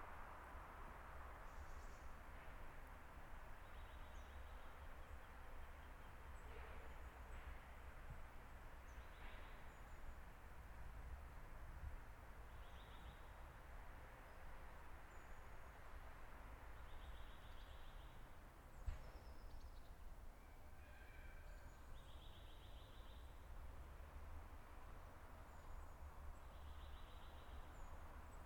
Achter de Boskapel, Buggenhout, België - Buggenhout Bos
[Zoom H4n Pro]
Buggenhout, Belgium, 3 February, ~14:00